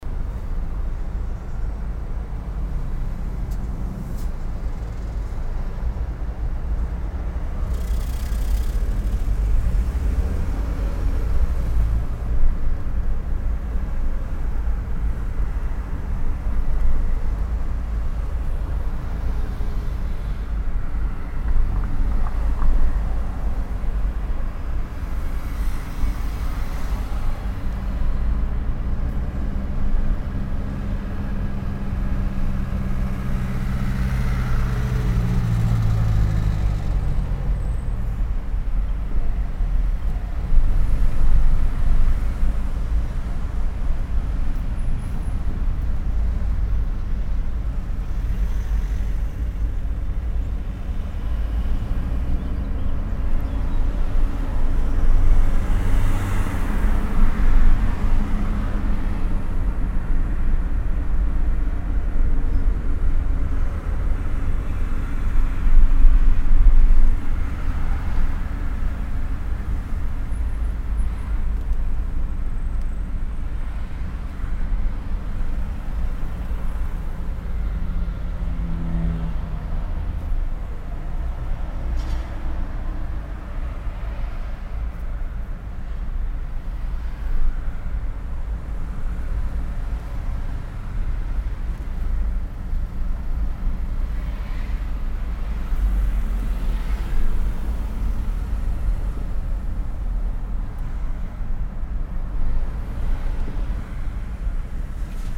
cologne, holzmarkt, rheinuferstr, im verkehr
soundmap: köln/ nrw
verkehrsgeräusche im fahrzeug, im dichten nachmittags verkehr auf der rheinuferstr, parallel fahrt zu baustelle rheinauhafen
project: social ambiences/ listen to the people - in & outdoor nearfield
8 June 2008, 11:53